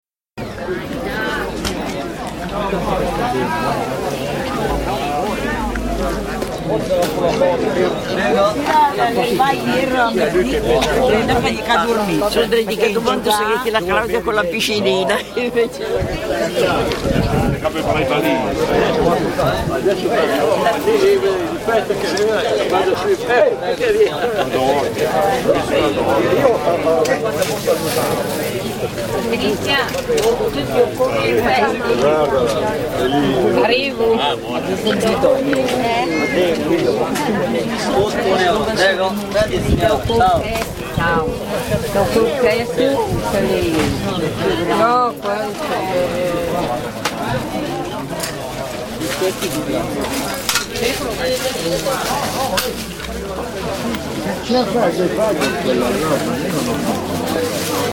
P.za mercato, Parabiago, Mercato del giovedì
Il mercato del giovedì mattina, luogo di ritrovo dei parabiaghesi. Il milanese si mescola agli altri dialetti e lingue straniere.
Parabiago Milan, Italy, 15 August 2006, ~11:00